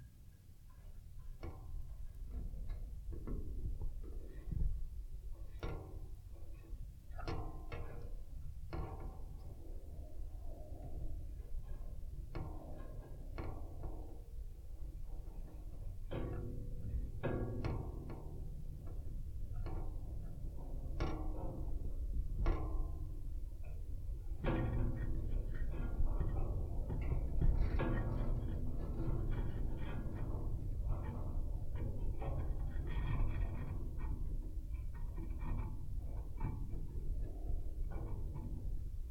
Oscar's Loop, Bentonville, Arkansas, USA - Coler Fence
Recording from 2 contact mics attached to wire fence just off Oscar's Loop Trail in Coler Mountain Bike Preserve.